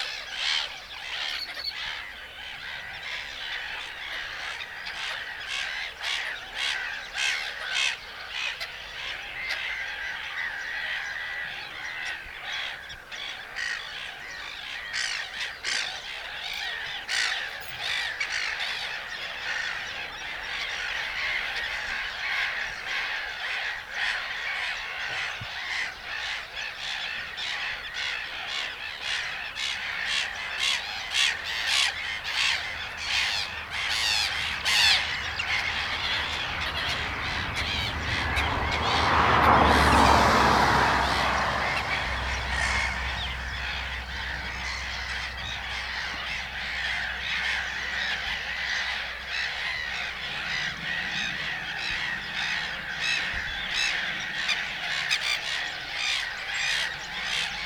Smogulec, Zamczysko - bird island
hundreds of birds live on the artificial water reservoirs build for farming fish. On the reservoir in front of me was a little island, covered with shouting birds. After a few minutes a few of them flew towards me and started circling over me. A housing estate to the left, a car leaving, man working his grinder. (roland r-07)